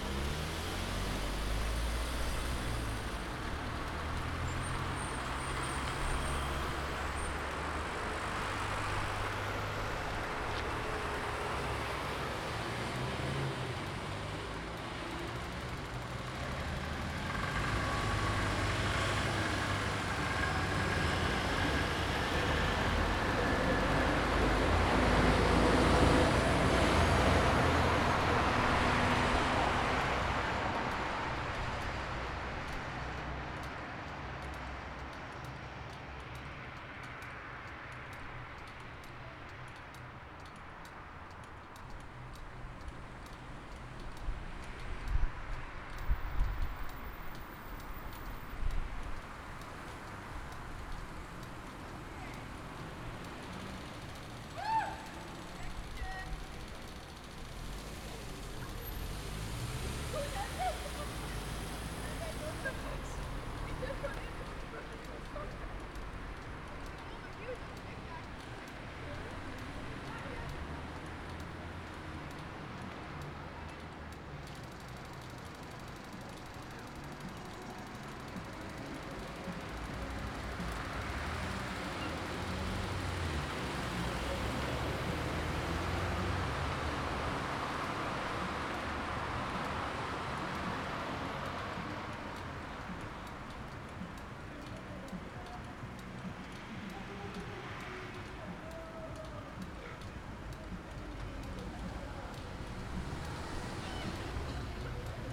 Tongelresestraat, Eindhoven
Queens Night 2010-04-30 00:22, Traffic Lights, traffic